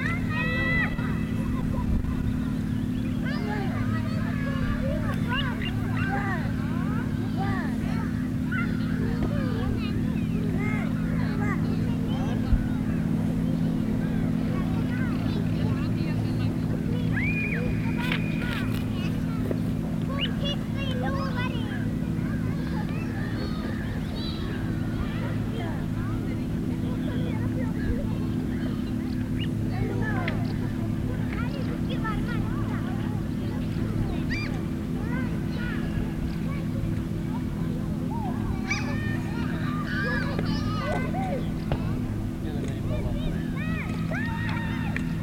Norrmjöle. Beach scene.
Beach scene. Children. Lesser Plover. Gulls. Talking. Reading (page-turning). Motor-boat keynote in background.
Umeå, Sweden, June 2011